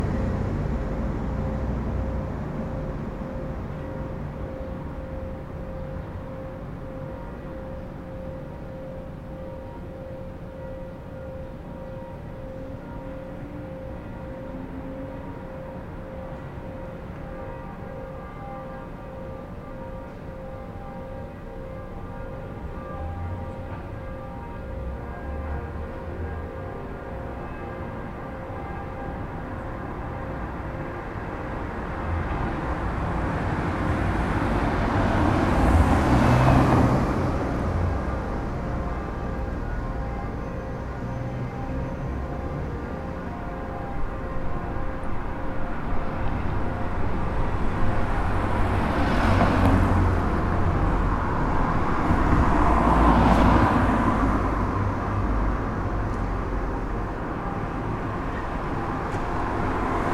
one minute for this corner: Cankarjeva ulica
20 August, ~19:00